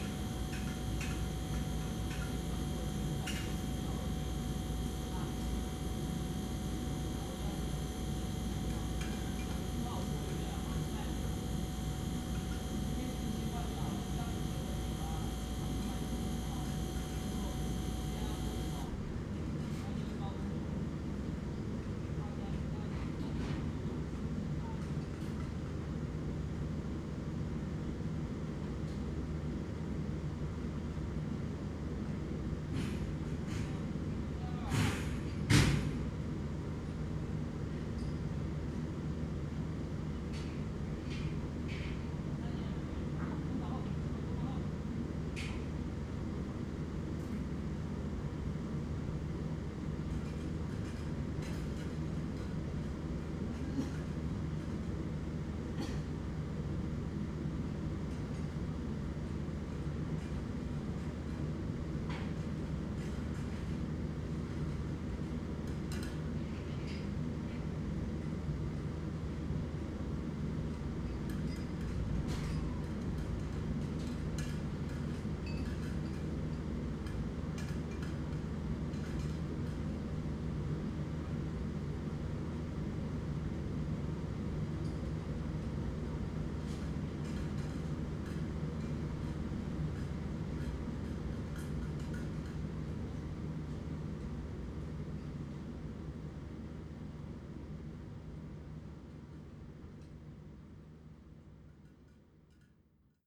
No., Fuqun Street, Xiangshan District, Hsinchu City, Taiwan - Scaffolding Removal
Workers take down scaffolding from a nearby house and load it into a truck. Fuqun Gardens community. Recorded from the front porch. Stereo mics (Audiotalaia-Primo ECM 172), recorded via Olympus LS-10.